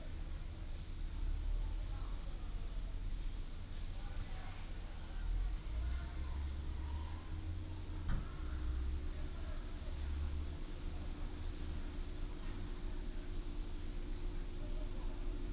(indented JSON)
{
  "title": "忠孝路20號, Fuxing Dist., Taoyuan City - Hot weather",
  "date": "2017-08-10 14:31:00",
  "description": "In the square outside the police station, Traffic sound, Tourists",
  "latitude": "24.81",
  "longitude": "121.35",
  "altitude": "444",
  "timezone": "Asia/Taipei"
}